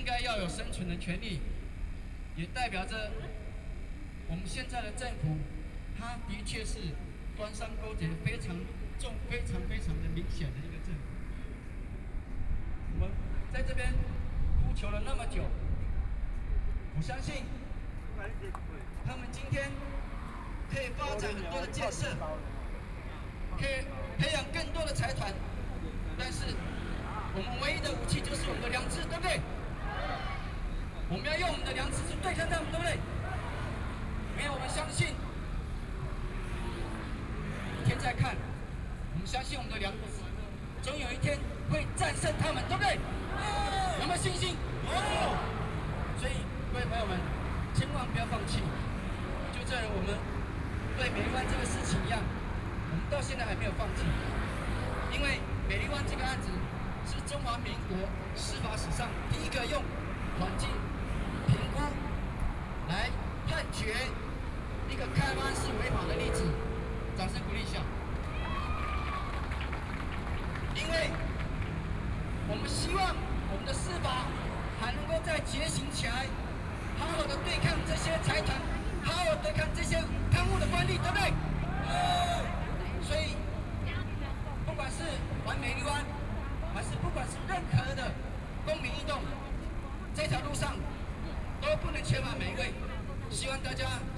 Citizen groups around Taiwan are speech, Traffic Sound, Binaural recordings, Zoom H6+ Soundman OKM II
Taipei City, Taiwan, 27 December 2013